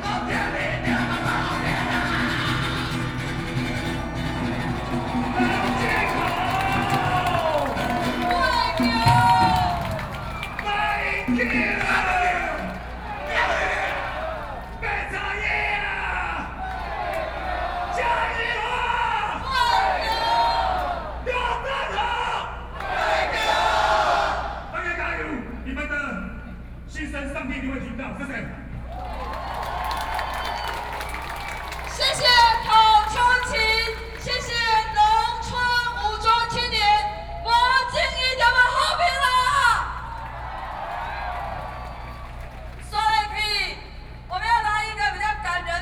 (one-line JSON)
{"title": "Ketagalan Boulevard, Zhongzheng District, Taipei City - Protest", "date": "2013-08-18 21:20:00", "description": "Rock band performing songs and shouting slogans to protest, Sony PCM D50 + Soundman OKM II", "latitude": "25.04", "longitude": "121.52", "altitude": "8", "timezone": "Asia/Taipei"}